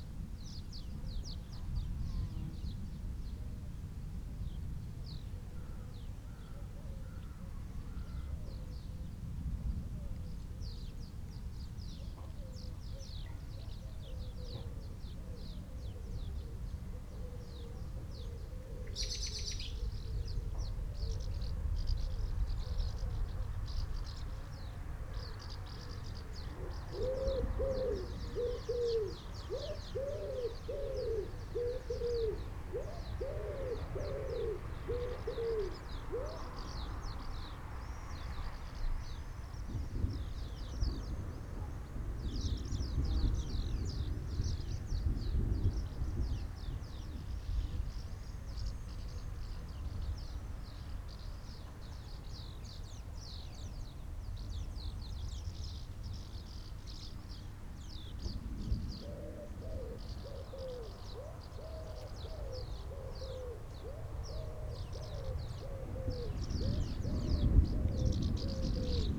Chapel Fields, Helperthorpe, Malton, UK - approaching thunderstorm ...
approaching thunderstorm ... mics through pre-amp in SASS ... background noise ... traffic ... bird calls ... wood pigeon ... house sparrow ... tree sparrow ... house martin ... starling ... collared dove ... swift ...